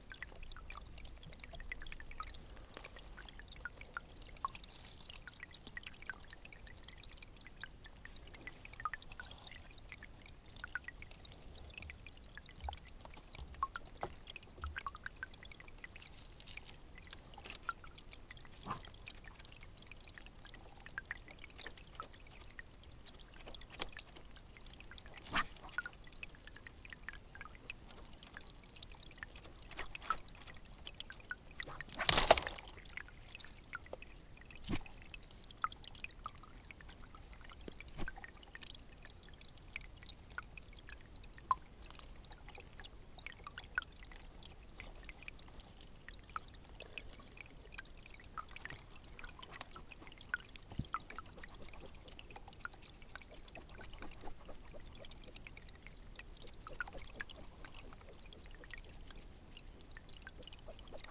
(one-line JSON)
{"title": "stream below feyssac - dripping KODAMA improvisation", "date": "2009-08-26 12:23:00", "description": "KODAMA improvised recording near a stream below the village of Feyssac", "latitude": "45.67", "longitude": "2.14", "altitude": "723", "timezone": "Europe/Berlin"}